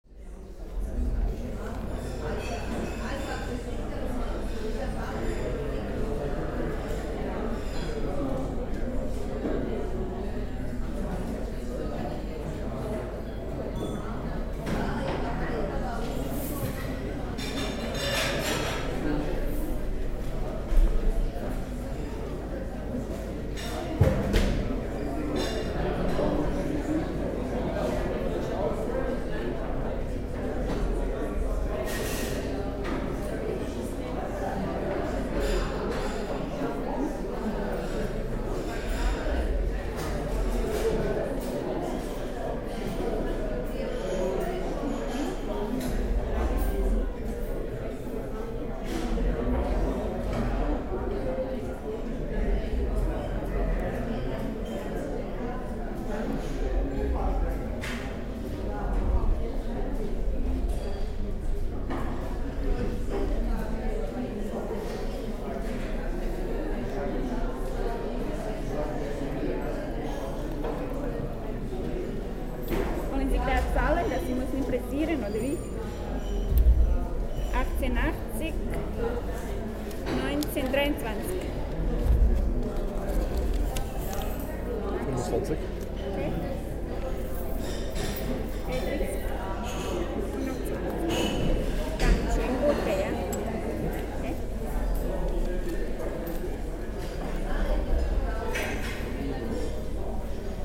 recorded june 16, 2008. - project: "hasenbrot - a private sound diary"
Zurich, Switzerland